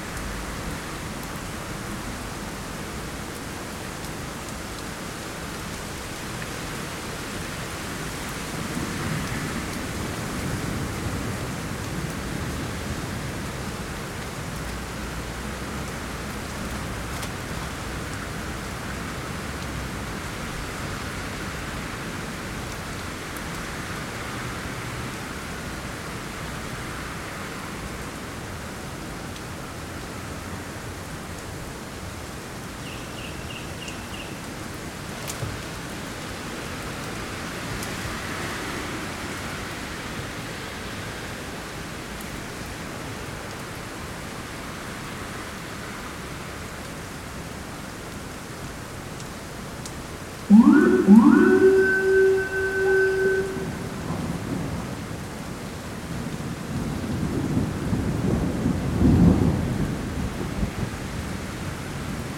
New York City Police Department (NYPD) sirens and car horns honk as a thunderstorm passes through Central Harlem, NYC. Raining and ~70 degrees F. Tascam Portacapture X8, A-B internal mics facing north out 2nd floor apartment window, Gutmann windscreen, Manfrotto Nanopole. Normalized to -23 LUFS using DaVinci Resolve Fairlight.